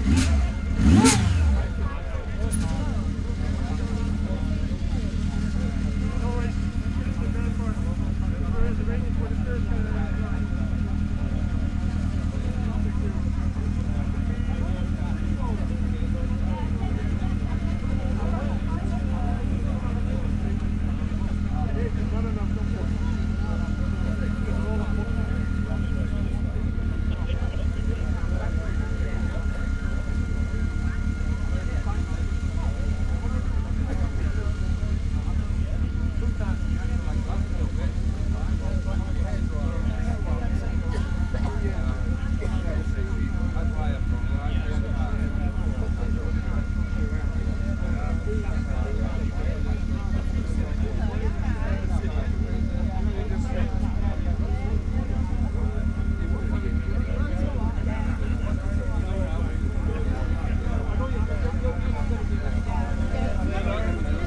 Glenshire, York, UK - Motorcycle Wheelie World Championship 2018 ...
Motorcycle Wheelie World Championship 2018 ... Elvington ... pit lane prior to the riders briefing ... lavalier mics clipped to baseball cap ...